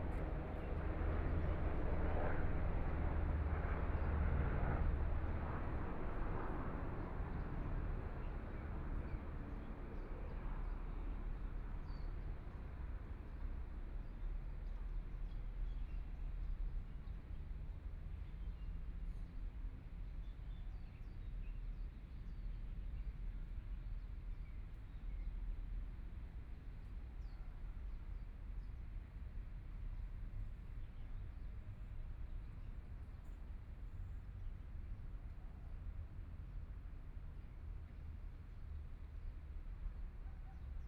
慈濟醫院花蓮院區, Taiwan - birds sound
Aircraft flying through, Environmental sounds, birds sound
Binaural recordings
Zoom H4n+ Soundman OKM II